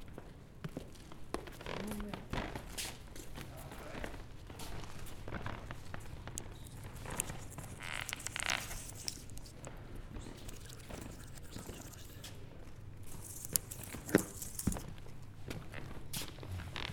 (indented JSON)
{
  "title": "Venice, Province of Venice, Italy - Architecture art Installation",
  "date": "2012-09-17 16:18:00",
  "description": "cardboard being walked on, people talking and moving, snoring sounds from video projection",
  "latitude": "45.43",
  "longitude": "12.34",
  "altitude": "10",
  "timezone": "Europe/Rome"
}